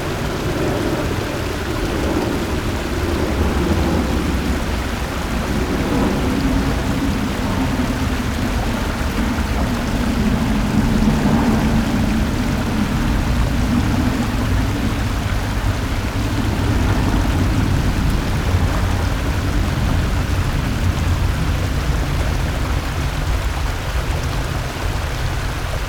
Xiafu, Linkou Dist. - Water sound
Stream, birds
Sony PCM D50